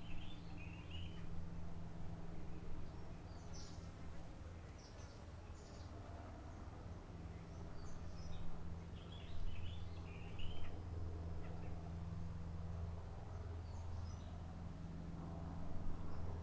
Forêt de la Roche Merveilleuse, Réunion - 20181205 120044 lg78rvsa0466 ambiance sonore CILAOS MATARUM
04:56 tec tec mouche, merle-maurice (loin)
08:20 passage hélicoptère de type robinson, exemple à isoler et à mettre à part dans les exemple de nuisances anormales.
les oiseaux chantent plus fort sur le moment mais ensuite s'arrêtent
13:28 nouveau passage hélicoptère
24:08 hélico plus loin
Il s'agit d'un petit robinson de couleur blanche.
28:39 retour calme.
Cet enregistrement est l'occasion de mesurer l'émergence acoustique
5 December 2018, 12:00